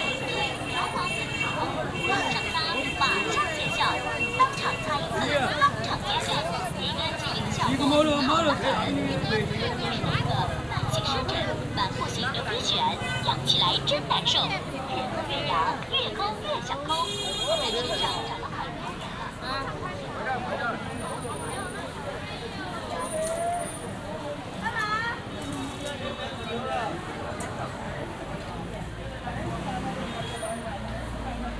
Nan'an, Chongqing, Chiny - Soundwalk on market street
Soundwalk on market street
Binaural Olympus LS-100
19 October, 14:33